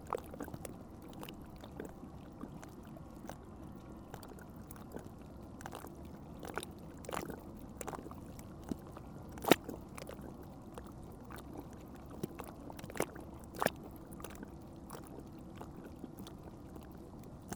La Grande-Paroisse, France - River sound
The river Seine makes small waves in a hole on the river bank.